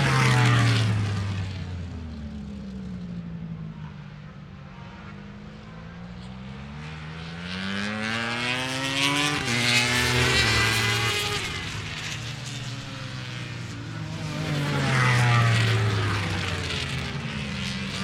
Donington Park Circuit, Derby, United Kingdom - British Motorcycle Grand Prix 2005 ... moto grandprix ...
British Motorcycle Grand Prix 2005 ... free practice one ... part one ... the era of the 990cc bikes ... single point stereo mic to minidisk ...
22 August 2005, 09:50